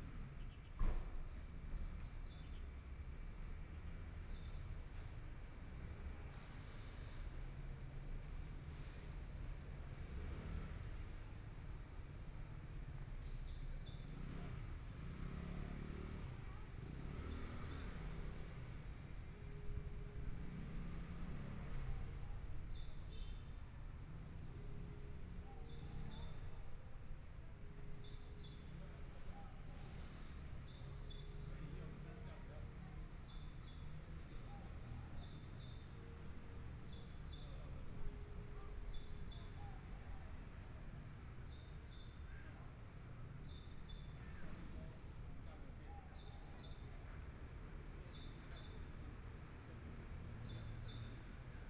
Sitting in the park, Environmental sounds, Parents and kids, Binaural recordings, Zoom H4n+ Soundman OKM II
Zhongshan District, Taipei City, Taiwan